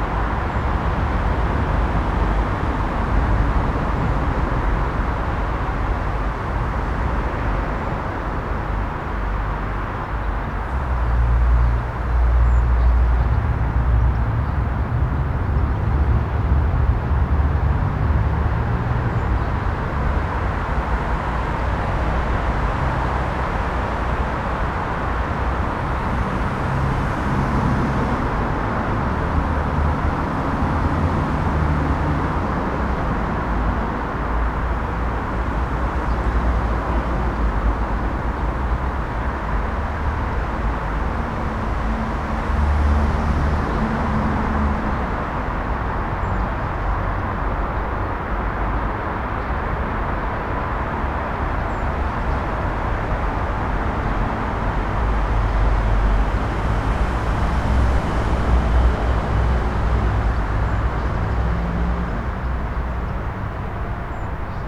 berlin, bundesplatz: park - the city, the country & me: small park
small park on a traffic island
the city, the country & me: april 10, 2013
Deutschland, European Union